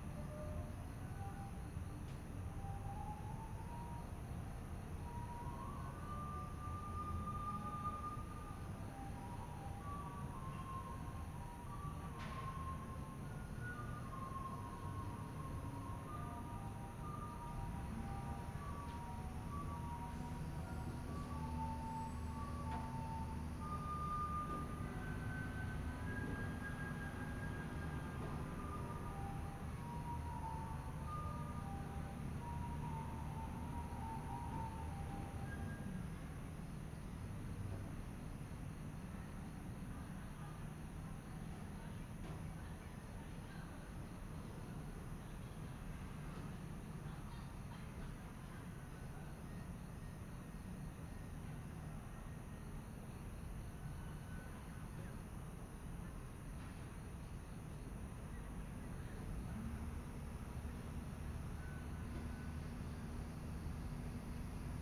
花博公園, Taipei City - Dogs barking
The hostess is looking for a dog, Dogs barking, Traffic Sound, Aircraft flying through, Binaural recordings, Zoom H4n+ Soundman OKM II
Taipei City, Taiwan, 17 February 2014, ~21:00